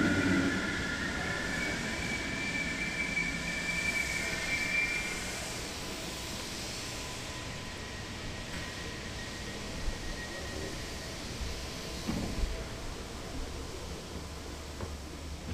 Pearse Station, Dublin, Co. Dublin, Irland - Dart
Waiting for the night's "Dart" train out to Dalkey to arrive. The sound of machines in motion is beautiful.